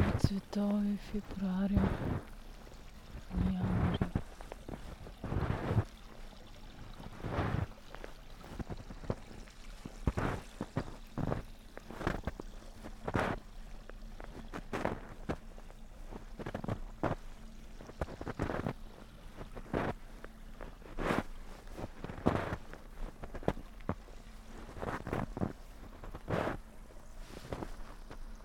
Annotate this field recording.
snow, steps, stream, spoken words